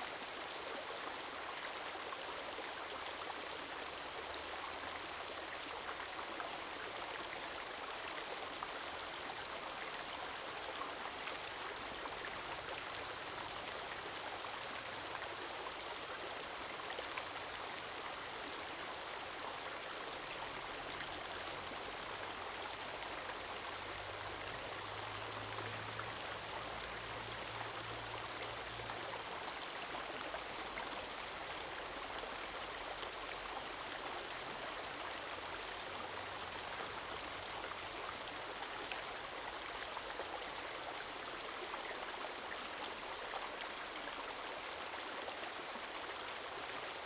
Zhong Lu Keng River, 桃米里, Puli Township - sound of streams
Streams, The sound of water streams
Zoom H2n Spatial audio